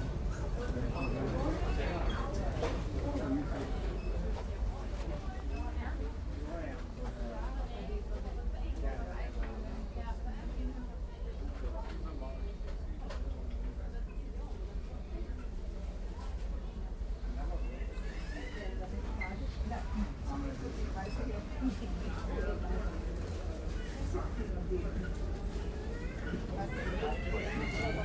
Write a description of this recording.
recorded on night ferry trelleborg - travemuende, august 10 to 11, 2008.